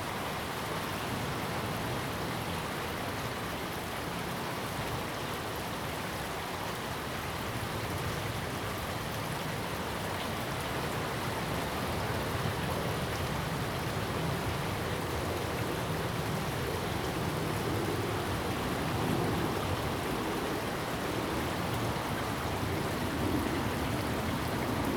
Qianzhouzi, New Taipei City - Stream sound
Sound of the waves, Stream sound, Aircraft flying through
Zoom H2n MS+XY